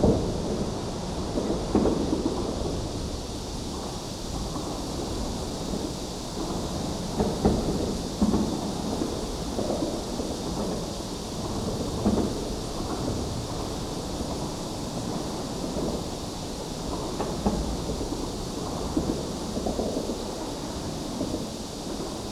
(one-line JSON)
{"title": "Zhongli Dist., Taoyuan City - traffic sound", "date": "2017-07-28 07:03:00", "description": "Cicada cry, traffic sound, Under the highway, Zoom H2n MS+ XY", "latitude": "24.97", "longitude": "121.22", "altitude": "121", "timezone": "Asia/Taipei"}